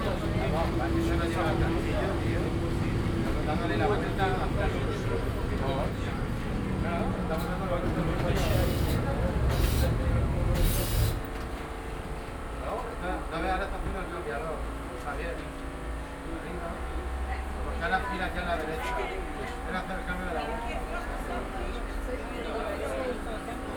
Lisbon, Electrico - sound drive
ambience in tram electrico nr.28 while driving through the city. binaural, use headphones
Lisbon, Portugal, 3 July 2010, 12:00